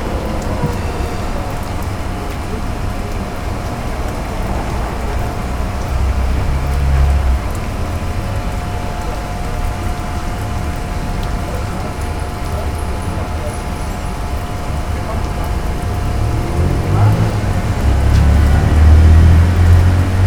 Poznan, Jezyce district, Kochanowskiego - watery scaffolding
lots of water dripping on the sidewalk and a plastic tarp from a scaffolding. workers talking among each other and with the foreman. moving tools and objects. (roland r-07)
23 September 2019, 14:40